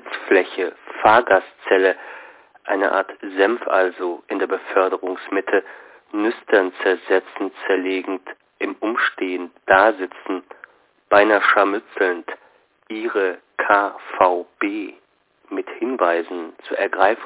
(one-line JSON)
{"title": "Köln, Friesenplatz - Unter, irdisch - hsch ::: 27.04.2007 16:25:39", "latitude": "50.94", "longitude": "6.94", "altitude": "56", "timezone": "GMT+1"}